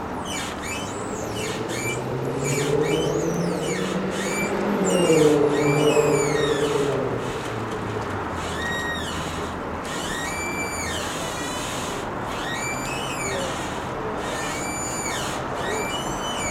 наб. Лейтенанта Шмидта, Санкт-Петербург, Россия - June 3, 2019, the Neva River
Sankt-Peterburg, Russia, 3 June 2019